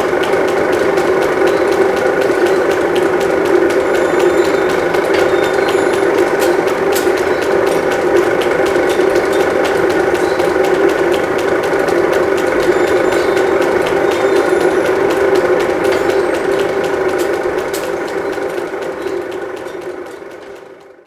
Saint-Sulpice-sur-Risle, France - Manufacture Bohin 2
Son d'une machine à la Manufacture Bohin